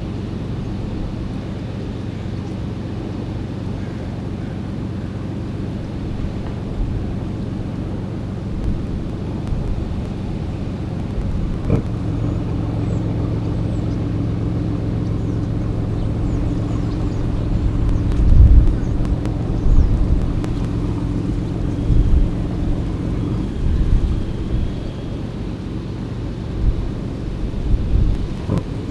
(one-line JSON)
{"title": "Ballard Locks - Ballard Locks #1", "date": "1998-11-13 09:06:00", "description": "The Hiram M. Chittenden Locks, popularly known as the Ballard Locks, raise and lower boats traveling between freshwater Lake Washington and saltwater Puget Sound, a difference of 20 to 22 feet (depending on tides). A couple hundred yards downstream is a scenic overlook, almost directly beneath the Burlington Northern trestle bridge shown on the cover. From that spot we hear a portrait of commerce in 3-dimensions: by land, by air and by sea.\nMajor elements:\n* The distant roar of the lock spillway and fish ladder\n* Alarm bells signifying the opening of a lock\n* Boats queuing up to use the lock\n* Two freight trains passing overhead (one long, one short)\n* A guided tour boat coming through the lock\n* Planes and trucks\n* Two walkers\n* Seagulls and crows", "latitude": "47.67", "longitude": "-122.40", "altitude": "34", "timezone": "America/Los_Angeles"}